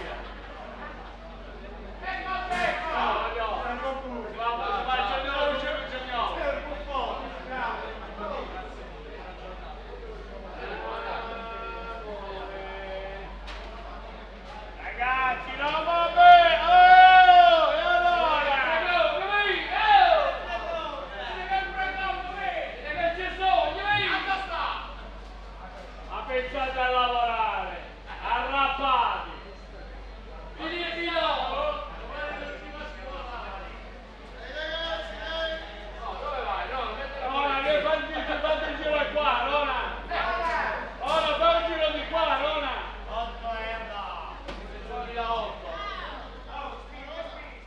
Mercato ortofrutticolo coperto, Piazza della Repubblica, Torino TO, Italie - Turin - Marché couvert aux poissons
Turin - Italie
Ambiance au marché couvert aux poissons